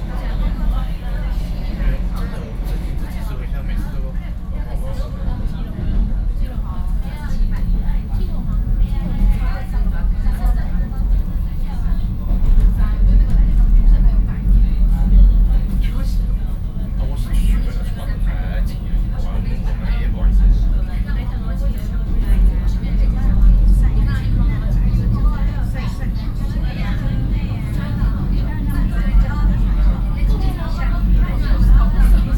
On the train, Binaural recordings